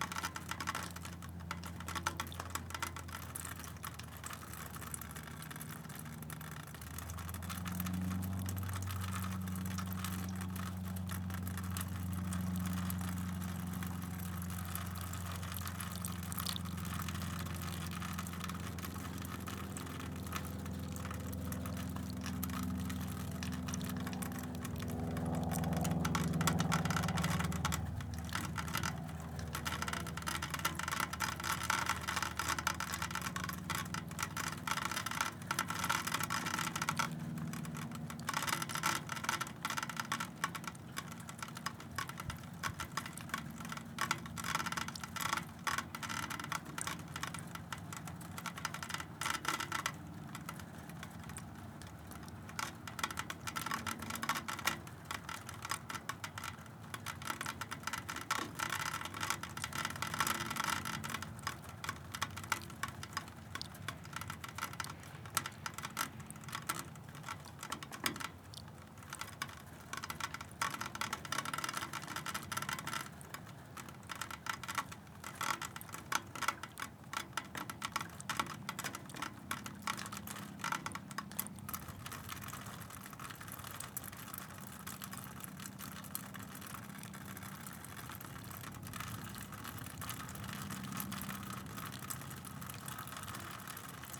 Waters Edge - Melting Snow in Downspout
This is the sound of the snow melting from the roof and coming down the the downspout on a warm March day.
Washington County, Minnesota, United States, 15 March